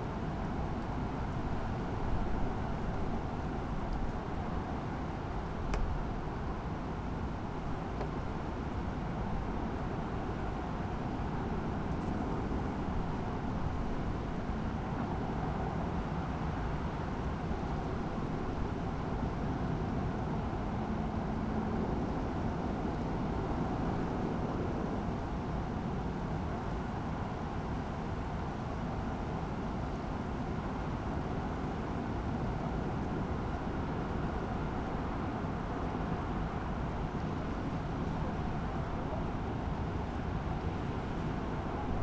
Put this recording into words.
strong wind over the city. a pile of papers flapping their pages and a plastic container moving in the wind.